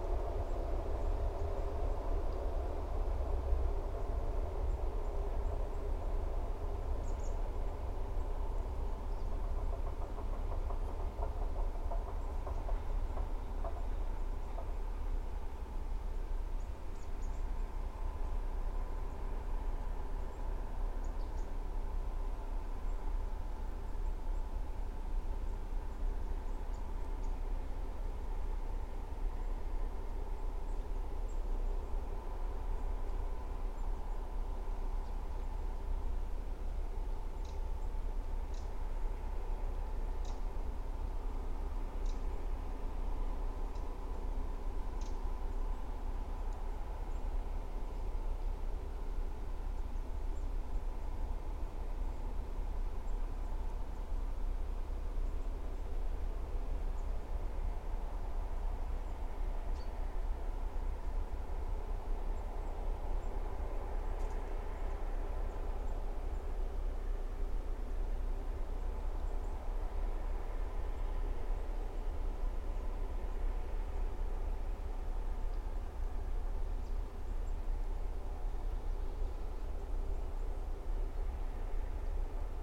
Vyžuonos, Lithuania, woodcutters tractors
heavy woodcutters machinery...sounds like alien beast in the forest
Utenos apskritis, Lietuva, 11 October 2021, 17:55